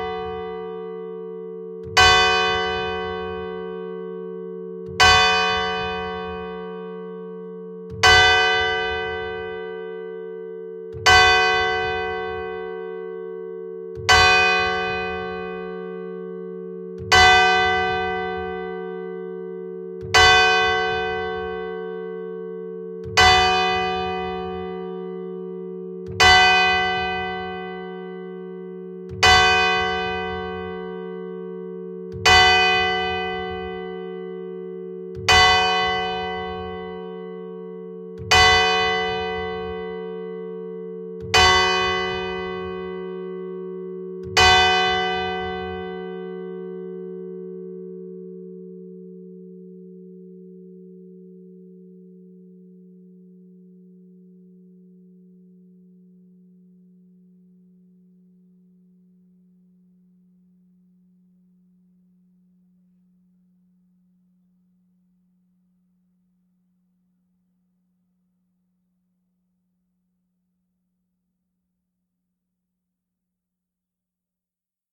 Pl. Edouard VII, Le Touquet-Paris-Plage, France - Le touquet Paris Plage - église
Le Touquet - Paris Plage
église Ste Jeanne d'Arc
Tintement.